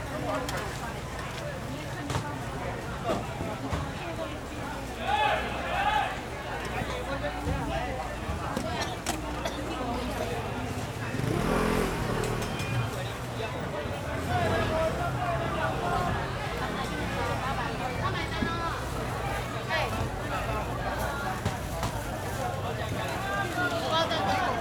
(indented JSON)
{
  "title": "Ln., Minxiang St., Zhonghe Dist., New Taipei City - In the Evening market",
  "date": "2012-01-21 18:05:00",
  "description": "In the Evening market\nZoom H4n",
  "latitude": "24.99",
  "longitude": "121.52",
  "altitude": "9",
  "timezone": "Asia/Taipei"
}